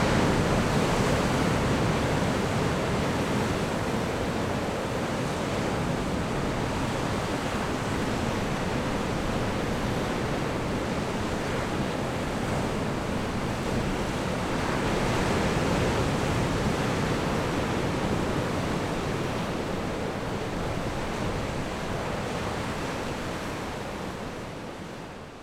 公舘村, Lüdao Township - On the coast
On the coast, Wave
Zoom H6 XY +Rode NT4